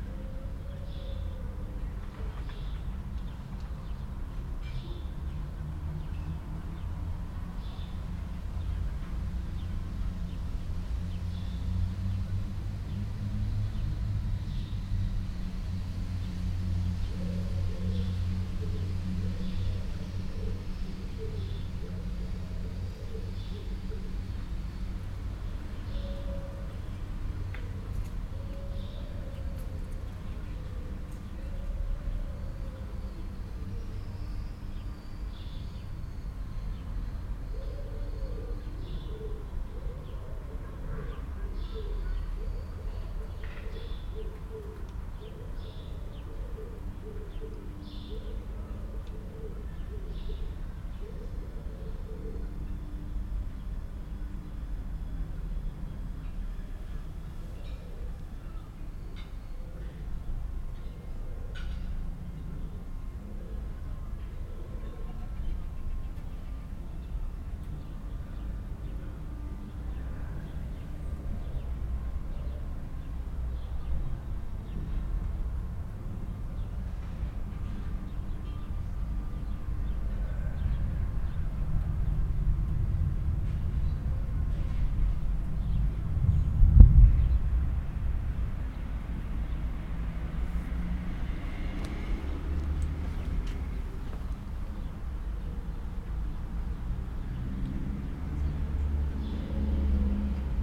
Weimar, Weimar, Germany - Deep space 1: for headphones.
Semi-cloudy weather at a transit bus station in Weimar. Facing me are big trees with pigeons flapping their wings at each other in a seemingly quarrelling mood. Intermittent calls of pigeons are present from left to right. A bicycle can heard softly passing by with piano music layered in the background. Footsteps can be heard from a passer-by from right to left and vehicular hums and traffic can be heard but laid back. Subtle winds and gathering thunder are in the sound.
Temperature is around 32 Degrees and the space is relatively calm and meditative.
Recording gear: Zoom F4, LOM MikroUsi Pro XLR version, Beyerdynamic DT 770 PRO Headphone.
Post production monitoring headphone: Beyerdynamic DT 1990 PRO.
Recording technique: Quasi-binaural.
Thüringen, Deutschland, 9 August